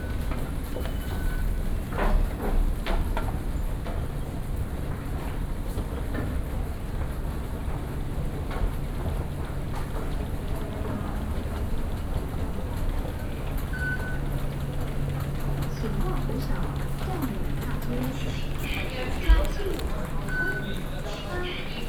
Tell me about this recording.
walking into the MRT station, Sony PCM D50 + Soundman OKM II